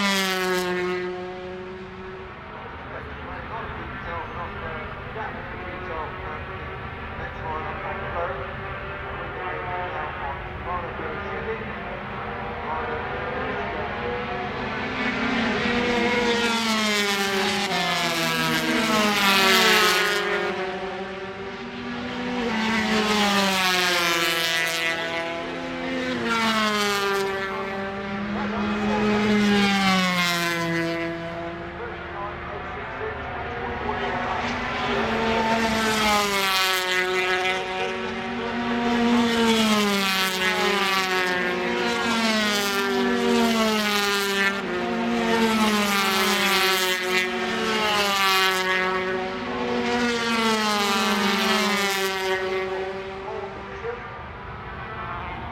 Castle Donington, UK - British Motorcycle Grand Prix 2003 ... 125 ...
British Motorcycle Grand Prix 2003 ... 125 qualifying ... one point stereo to minidisk ... time approx ... commentary ...
Derby, UK, 11 July 2003